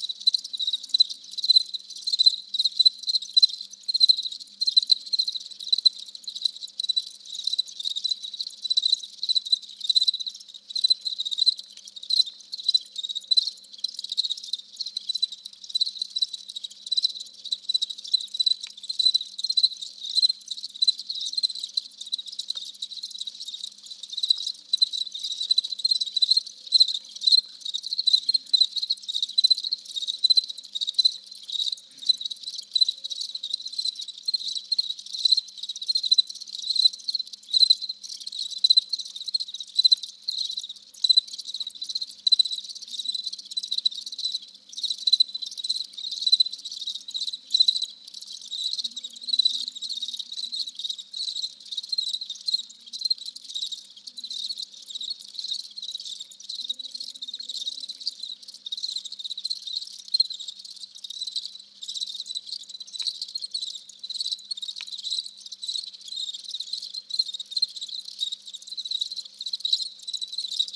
Close recording of crickets inside a plastic box during a laboratory with children in CECREA La Ligua (Chile).
The cricket are "fulvipennis" crickets, around 300 crickets are inside the box.
Recorded during the night trough two Sanken Cos11 D microphones, on a Zoom H1 recorder.
Recorded on 10th of July 2019.
Provincia de Petorca, Región de Valparaíso, Chile, July 2019